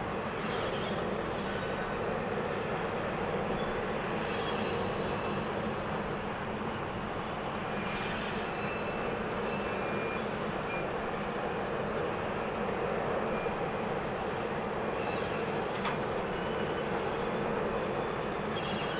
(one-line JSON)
{"title": "Shannonbridge, Central Termoelèctrica", "date": "2009-07-14 23:34:00", "description": "Peat-Fired Power Station at night", "latitude": "53.28", "longitude": "-8.05", "altitude": "37", "timezone": "Europe/Dublin"}